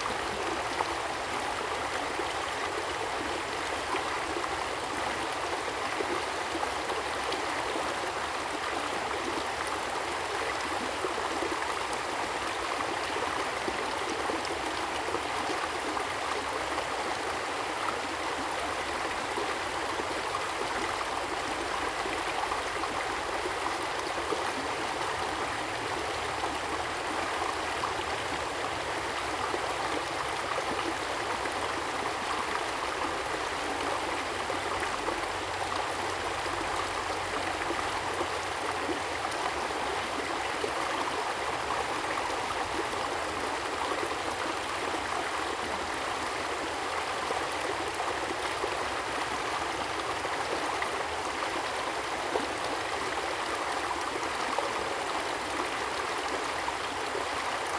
Chung Lu Keng River, 桃米里 Puli Township - Stream
Stream, Flow
Zoom H2n MS+XY